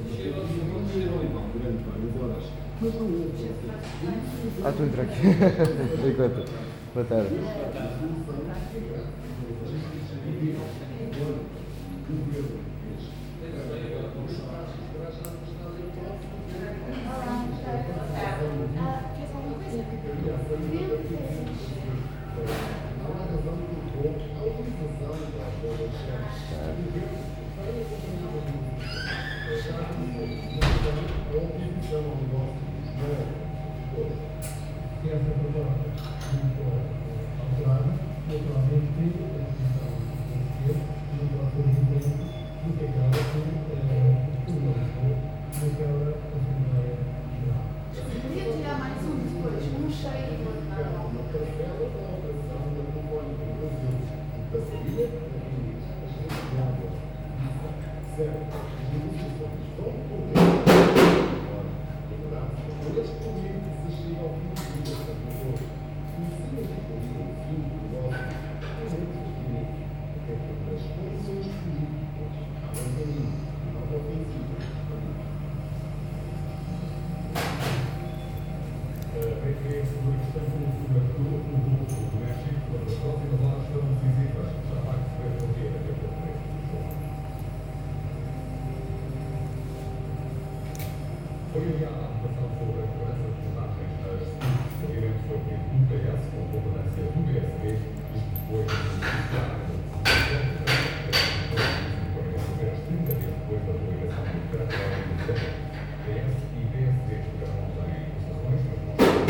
Barca de Alva, Portugal
Gravacao binaural em Barca de Alva. Mapa Sonoro do Rio Douro Binaural recording in Baraca de Alva, Portugal. Douro River Sound Map